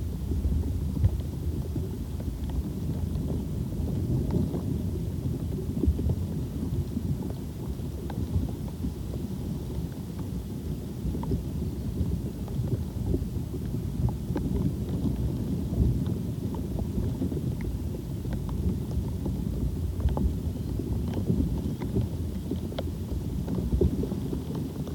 Pergola, Malvern, UK - Ducklings Hatching
Recorded after the mallard heart beat this must be the sound of 10 ducklings peckiing their way out of the shells. Recorded with the same contact mic fixed under the roof planks and a MixPre 3